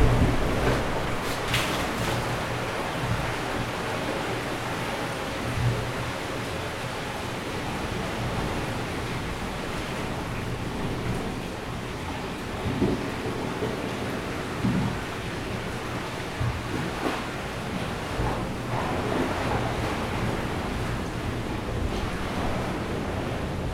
Royan, France - Waves swirls into crevasse [Royan]
Dans des crevasses entres les rochers, les vagues
tourbillonnent .Traveling.Écoulements.La mer au loin.
Into differents crevasse, Facing the sea.Waves come from below and create swirls. flowing.
Vaux-sur-Mer, France, 15 October 2013